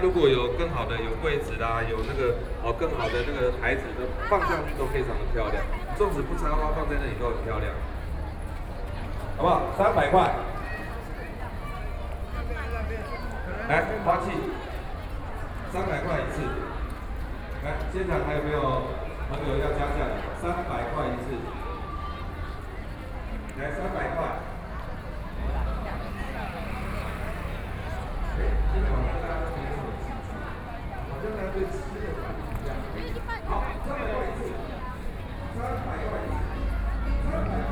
Taipei City Hakka Cultural Park - soundwalk
Yimin Festival, Fair, Binaural recordings, Sony PCM D50 + Soundman OKM II